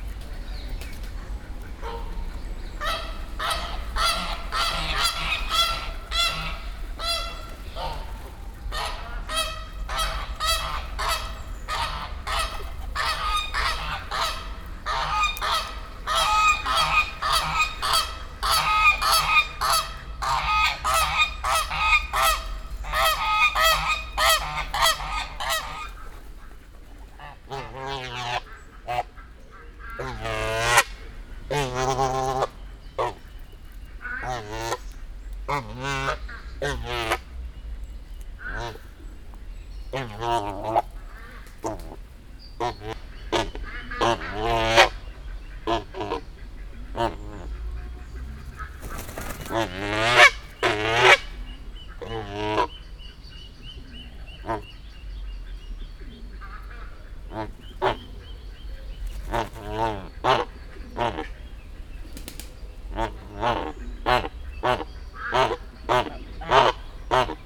Brussels, Parc Pierre Paulus, Ducks Goose and Jar.
Brussels, Parc Pierre Paulus, Canards, oies et Jar.
Saint-Gilles, Belgium, 17 May 2011, 09:56